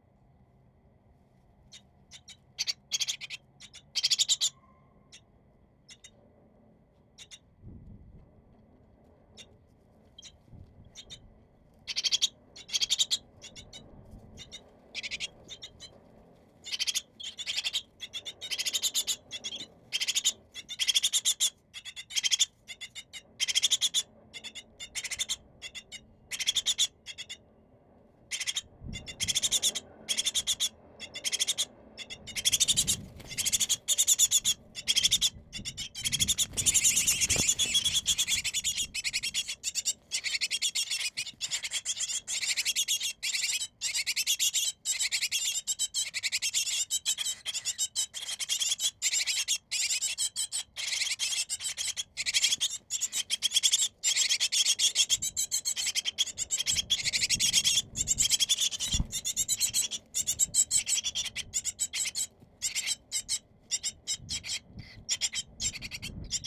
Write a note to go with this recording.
Athens, on Strefi hill, young tits' nest in a lamp post, after a few seconds, a parent bird flew in, touching the microphone. (Sony PCM D50, Primo EM172)